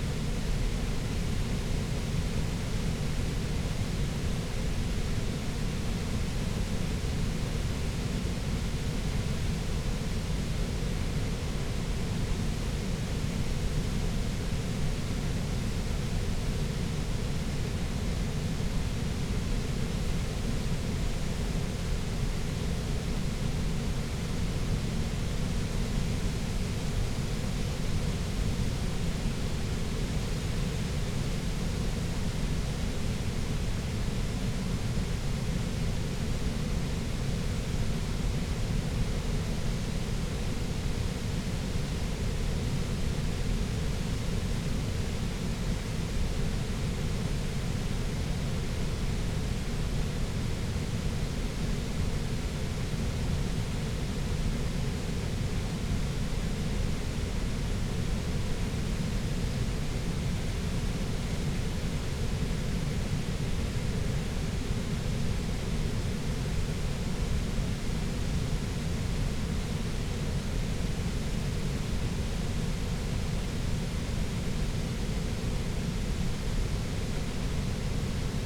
Kiekebuscher Spreewehr, Cottbus - river Spree, weir drone
river Spree, weir noise, deep drone, heard from a distance
(Sony PCM D50, Primo EM172)
Brandenburg, Deutschland